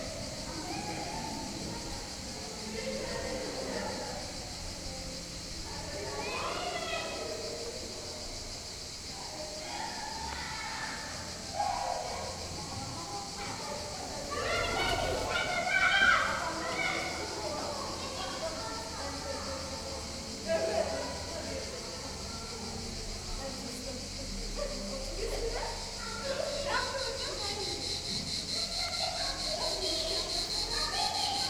mosque Lapta Cami - children in mosque
3 August 2017, ~15:00